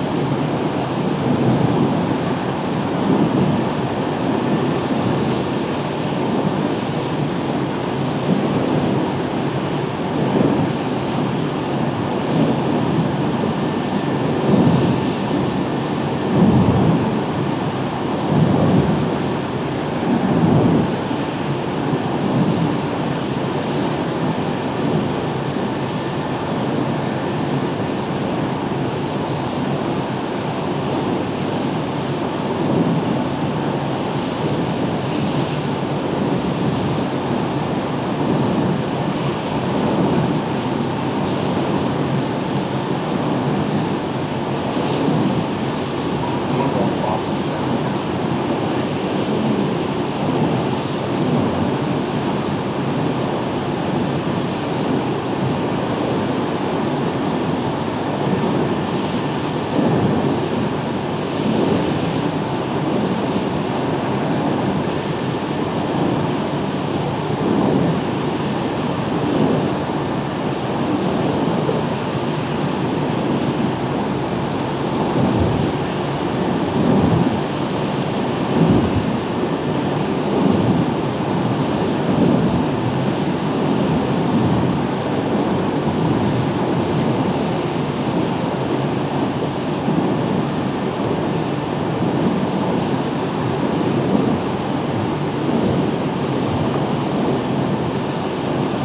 Carron Valley Reservoir Dam, Denny, UK - Waterway Ferrics Recording 003
Recorded with a pair of DPA4060s and a Sound Devices MixPre-3.
Scotland, United Kingdom